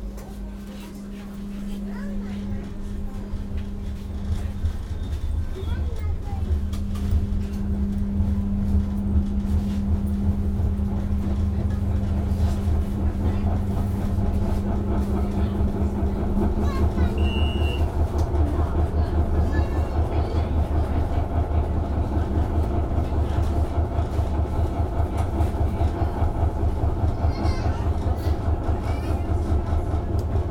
The tramway of the Belgian coast, between Lombardsijde and Nieuwpoort-Bad.